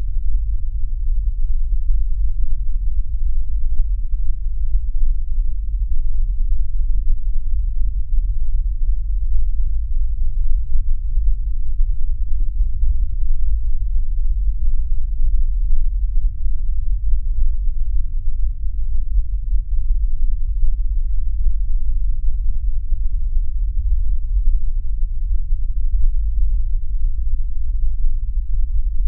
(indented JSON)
{
  "title": "Droničėnai, Lithuania, geophone on the shore",
  "date": "2022-05-06 18:50:00",
  "description": "Low frequancies! Geophone on a shore of little river.",
  "latitude": "55.52",
  "longitude": "25.66",
  "altitude": "123",
  "timezone": "Europe/Vilnius"
}